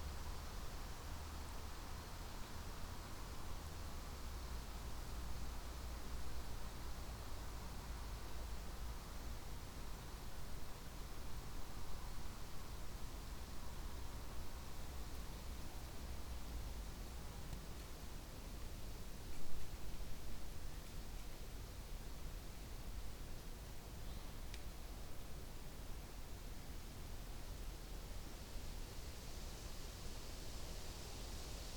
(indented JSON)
{
  "title": "Kyrkvägen, Nyland, Sverige - Graveyard compost",
  "date": "2020-09-05 14:42:00",
  "latitude": "63.08",
  "longitude": "17.75",
  "altitude": "1",
  "timezone": "Europe/Stockholm"
}